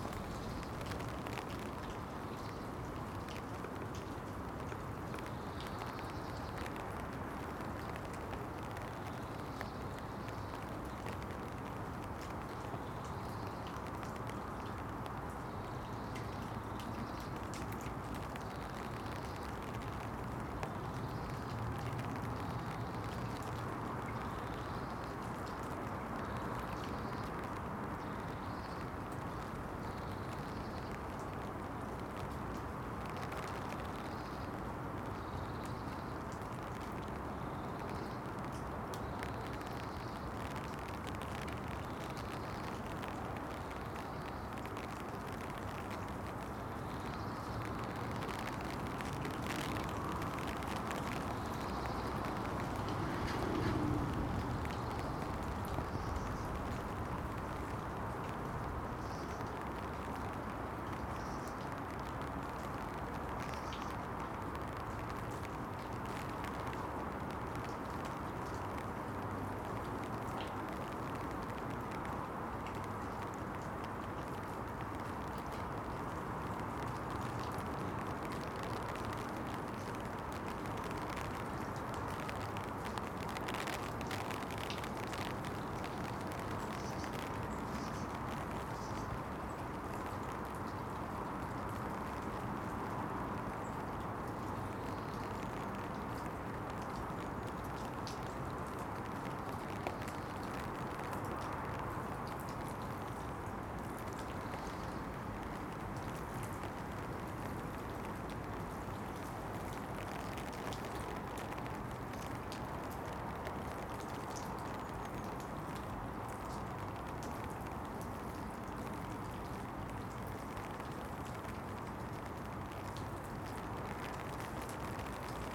Contención Island Day 30 inner southwest - Walking to the sounds of Contención Island Day 30 Wednesday February 3rd
The Drive
The rain falls
a leaking gutter spills water
into a puddle
A wildlife haven against an old wall
bug hotels and a brush pile
at the base of the wall
A blue tit sits
at the top of a birch tree
oblivious to the rain
A blackbird alarms along the alley
passing me by inches
Car engine noise doesn’t travel
but the seethe of tyre noise does